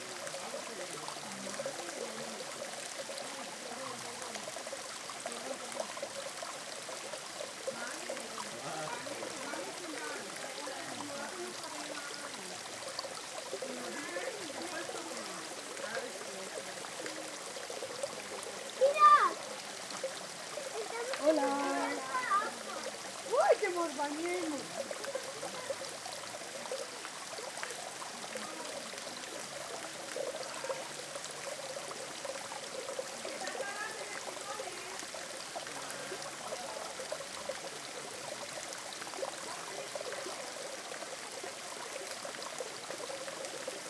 MVJQ+FH Bolulla, Espagne - Bolulla - Espagne Divers mix ambiance du jour
Bolulla - Province d'Alicante - Espagne
Divers mix ambiance du jour
ZOOM F3 + AKG 451B
Alacant / Alicante, Comunitat Valenciana, España, July 2022